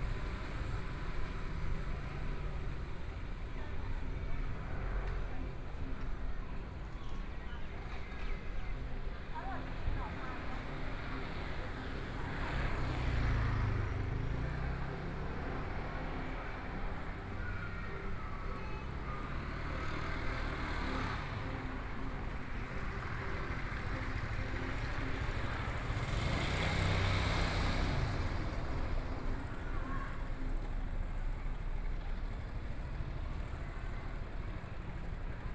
Dongshan Township, Yilan County - soundwalk
Walking in the streets of the village, After the traditional market, Binaural recordings, Zoom H4n+ Soundman OKM II
Yilan County, Taiwan, 8 November 2013